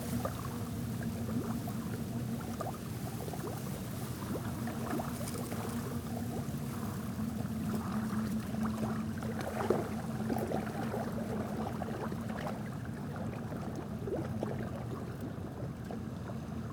Tannery Lands, Kingston Ontario - Cataraqui River and environs
The Great Cataraqui River, with Kingston's 'singing bridge' in the distance, and rustling grasses. The 'Tannery Lands' are a derelict and poisoned area where there was formerly industry that used nasty chemicals and heavy metals. The ground here is heavily contaminated but it is also an area that nature is reclaiming, and you can easily see osprey, herons, otters, beaver, and many turtles.